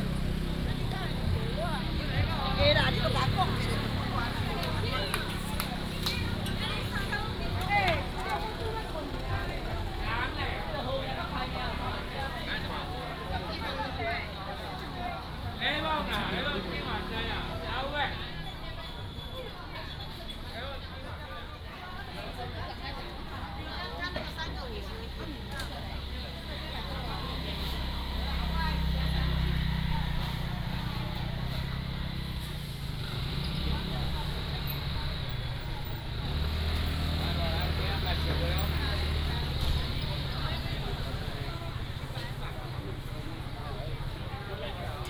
Walking in the traditional market
Yunlin County, Taiwan, 25 January